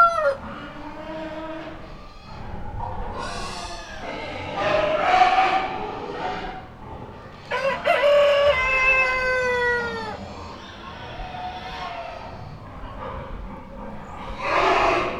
passing by a building full of horribly howling farm animals. the building had no windows and was locked so i wasn't able to look inside.
Portugal, 6 May